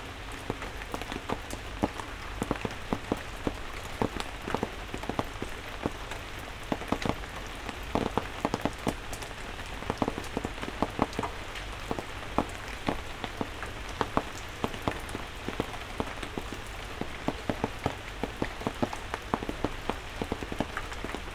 berlin, sanderstraße: vor restaurant - the city, the country & me: in front of greek restaurant
under the awning of the restaurant
the city, the country & me: june 5, 2012
99 facets of rain
Berlin, Germany, 5 June 2012